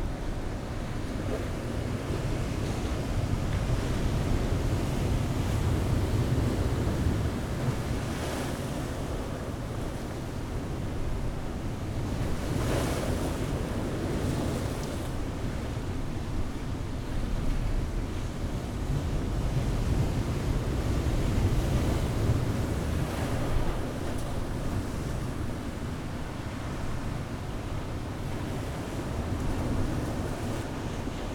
Whitby, UK - high tide ... two hours after ...
high tide ... two hours after ... lavaliers clipped to sandwich box ...
27 December 2018